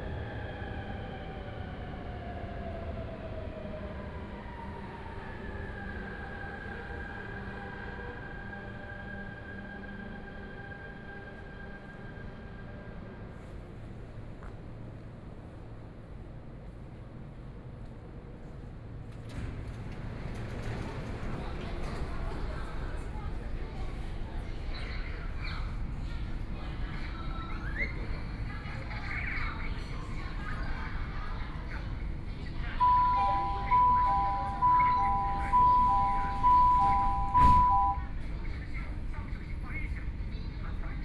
Yangpu District, Shanghai - Line 10 (Shanghai Metro)
from Wujiaochang station to East Yingao Road station, Binaural recording, Zoom H6+ Soundman OKM II